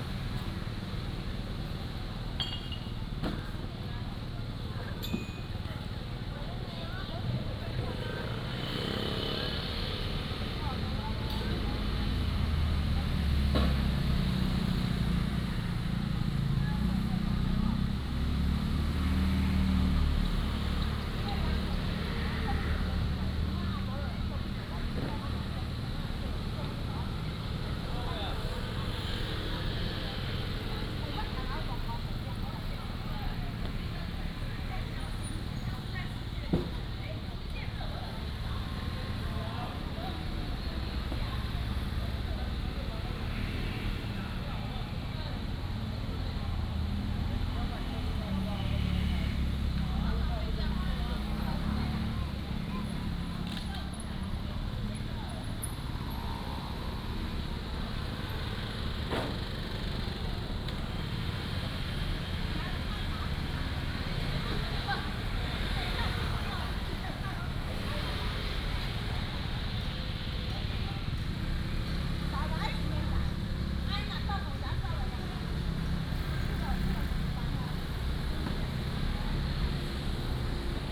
北鎮廟, Jincheng Township - In the square
In the square in front of the temple, Traffic Sound